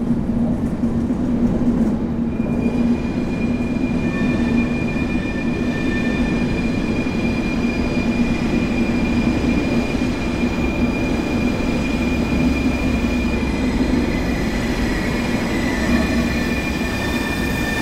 Kent Station Cork, Lower Glanmire Rd, Montenotte, Cork, Ireland - Dublin Train, Departing
11.25am train to Dublin Heuston sitting on platform and then departing. Noise of local construction work throughout. Tascam DR-05.